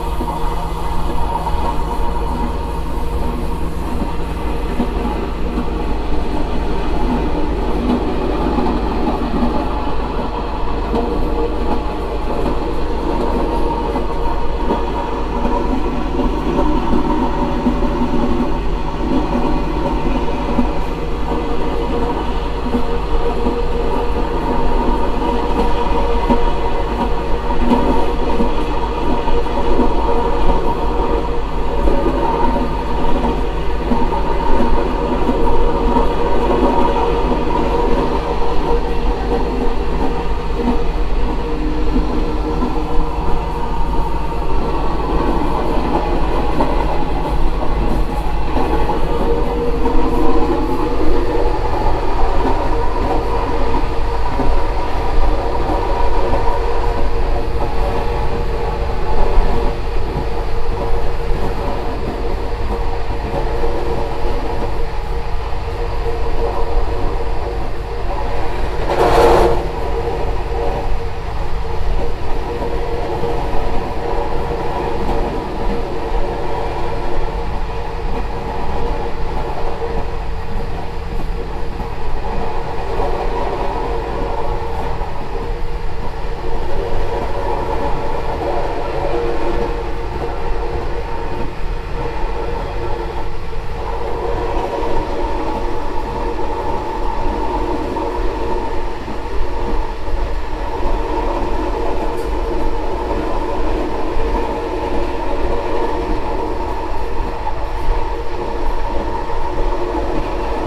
Tambon Thong Chai, Amphoe Bang Saphan, Chang Wat Prachuap Khiri Khan, Thailand - Toilette im Zug nach Surathani
Rattling and resonances of the toilette pipe in the train from Bangkok to Surathani, with a few occasional horn blowings.